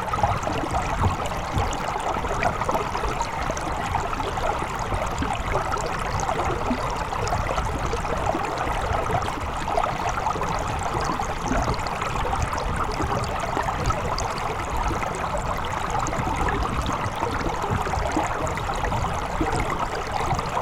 The Train river, recorded underwater, in a very bucolic landscape.